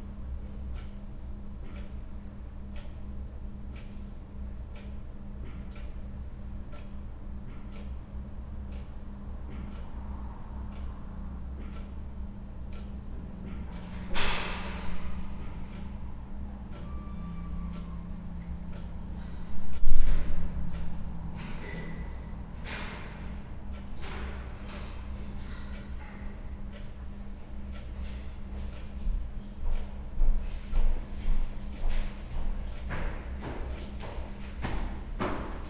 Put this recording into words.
Hotel Delta in, Dzierżoniów, former Reichenbach, waiting... (Sony PCM D50)